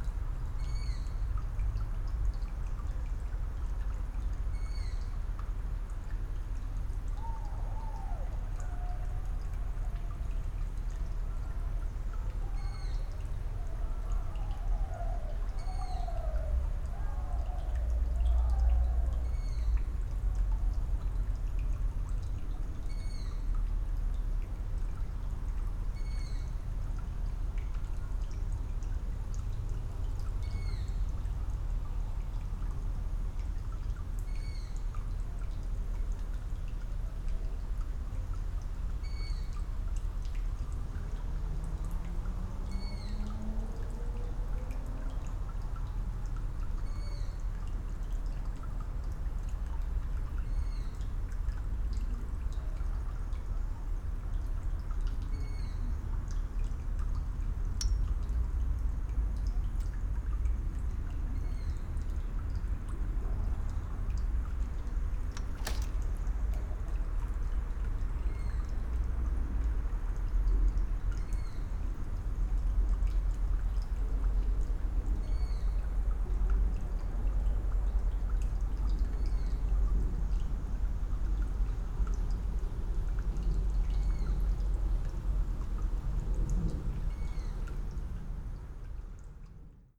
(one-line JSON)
{"title": "Friedhof Columbiadamm, Berlin - midnight ambience /w owl, dripping water and distant party", "date": "2020-06-18", "description": "midnight at the cemetery Friedhof Columbiadamm, an teenage owl (Asio otus, Waldohreule) is calling, water dripping from a leaking tap, sounds of a remote party in Hasenheide park, the always present city drone\n(Sony PCM D50, Primo EM172)", "latitude": "52.48", "longitude": "13.41", "altitude": "50", "timezone": "Europe/Berlin"}